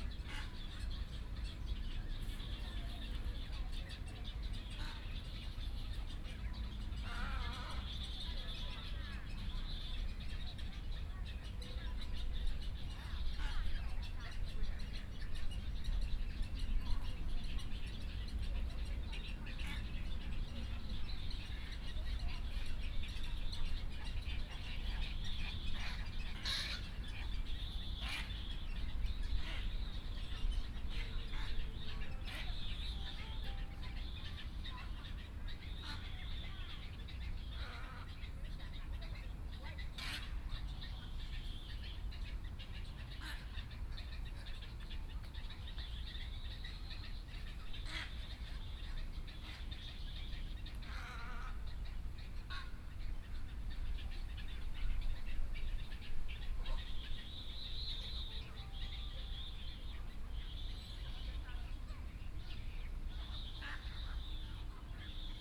Sitting on the lake, Birdsong, White egrets, Hot weather
Sony PCM D50+ Soundman OKM II